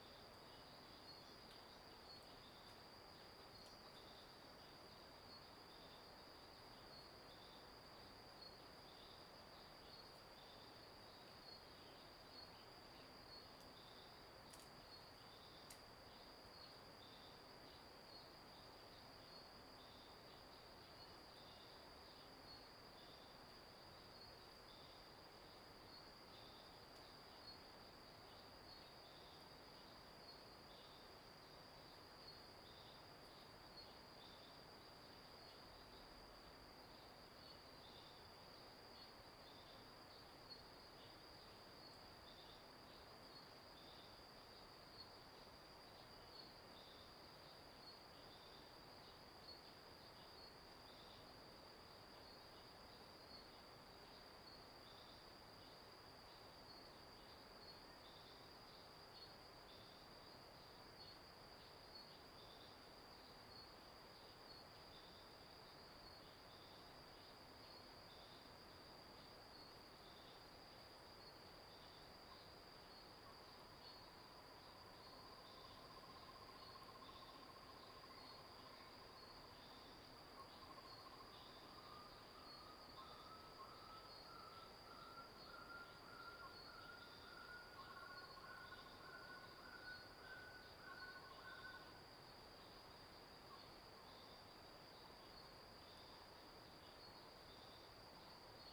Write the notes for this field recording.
early morning, Bird cry, Stream sound, Entrance in mountain farm